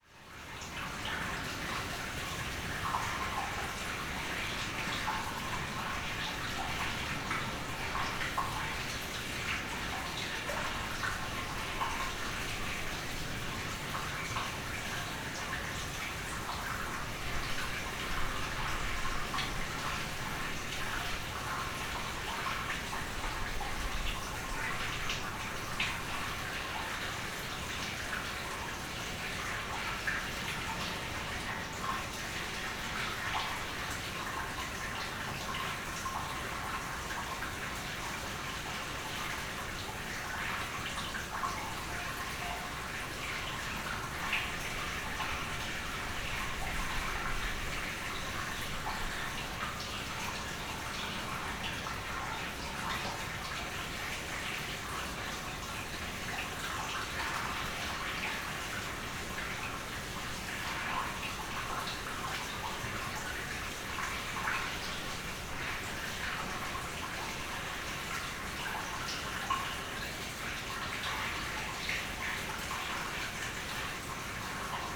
Beselich Niedertiefenbach, Grabenstr. - water in drain
place revisited, winter night, quiet village. this sound is one of the oldest i remember. or more precise, a few meters away, the sound of a beautiful little creek disappearing in a drain pipe.
her miserable body wastes away, wakeful with sorrows; leanness shrivels up her skin, and all her lovely features melt, as if dissolved upon the wafting winds—nothing remains except her bones and voice - her voice continues, in the wilderness; her bones have turned to stone. She lies concealed in the wild woods, nor is she ever seen on lonely mountain range; for, though we hear her calling in the hills, 'tis but a voice, a voice that lives, that lives among the hills.